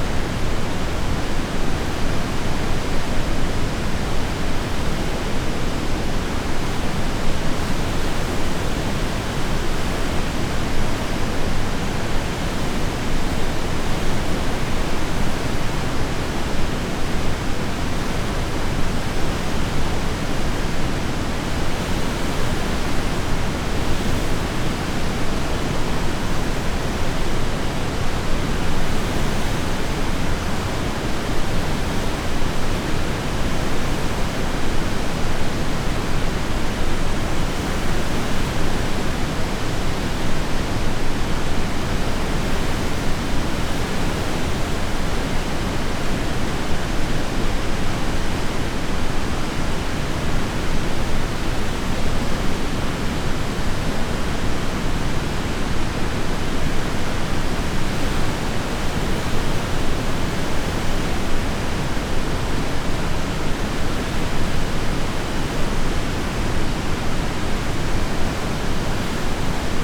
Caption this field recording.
August 10th 2022, Uiam Dam after heavy rains